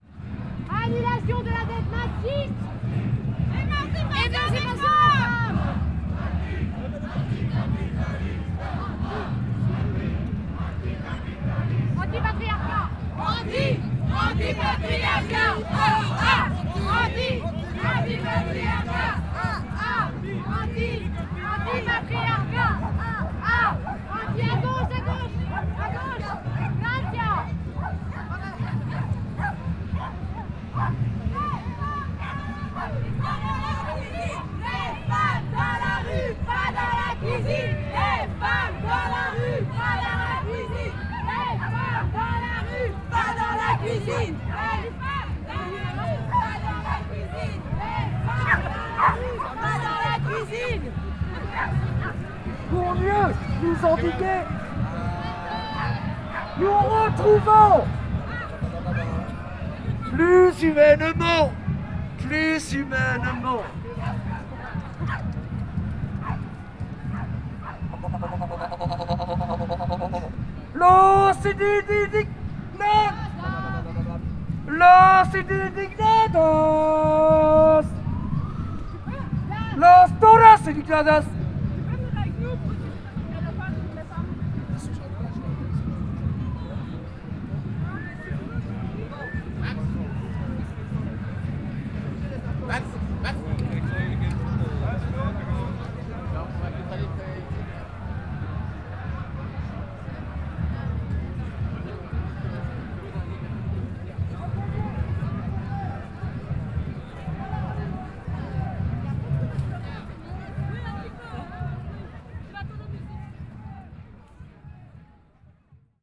Occupy Brussels, Avenue du Roi Albert II, the Feminists
City of Brussels, Belgium, 15 October 2011, 14:27